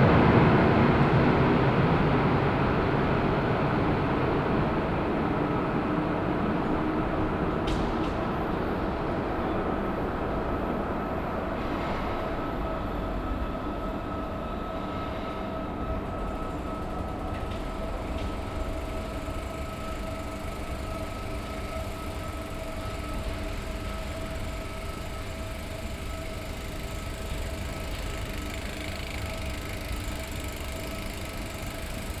In der U- Bahn Station Essen Philharmonie. Der Klang eines Fahrscheinautomatens, Schritte auf den Treppen, das Anlaufen der Rolltreppen, das Ein- und Ausfahren von Zügen.
Inside the subway station. The sound of a ticket, vending machine, then steps, the start of the moving staircases, trains driving in and out of the station.
Projekt - Stadtklang//: Hörorte - topographic field recordings and social ambiences